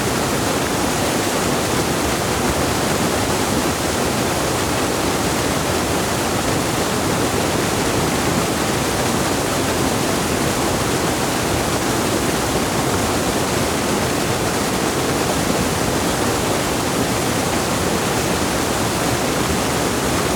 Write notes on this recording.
This area near the Best factory is a strange, surreal, empty landscape, covered in industrial coal waste, plants are beginning to re-establish. It is quiet and rather peaceful. Water black with coal dust gushes from rusty pipes. The sediment settles and the water, somewhat cleaner, flows into the larger pool. It seems relatively uncontaminated as many geese, duck and coots live here. Falcons fly around.